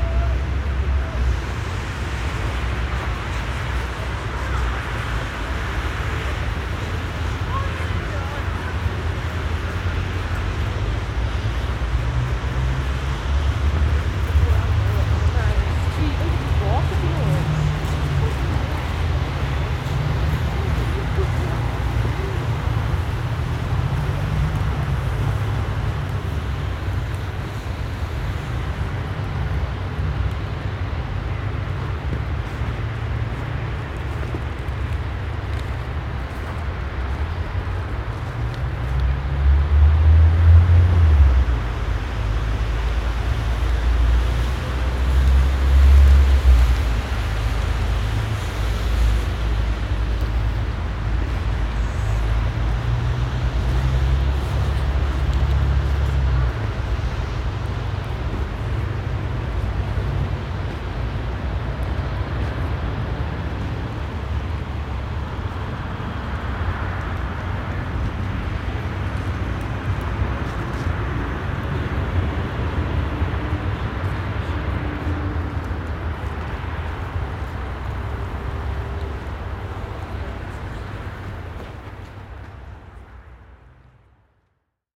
winter night in front of Marienkirche, Aporee workshop
radio aporee sound tracks workshop GPS positioning walk part 7, front of Marienkirche
1 February 2010, 13:24